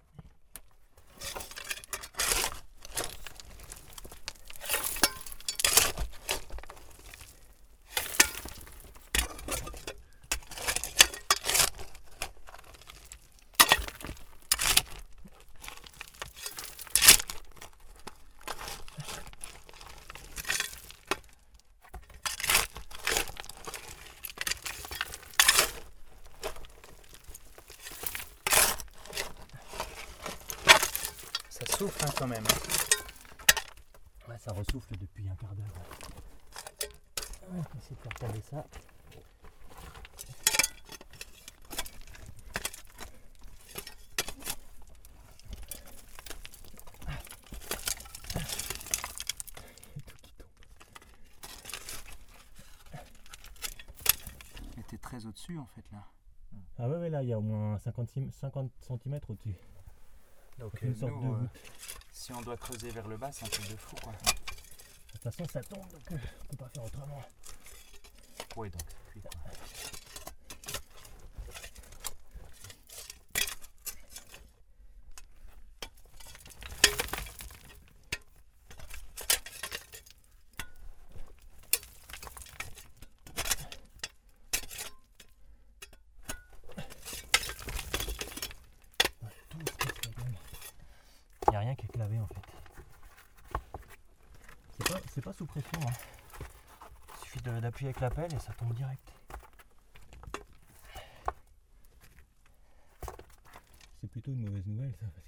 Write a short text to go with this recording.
In an underground mine, we are digging in aim to open a collapsed tunnel.